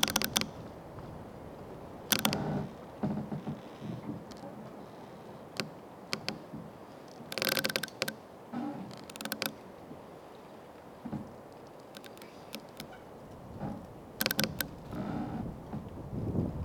Lithuania, Utena, creaking tree
its always fascinatint to listen to creaking trees
9 March 2011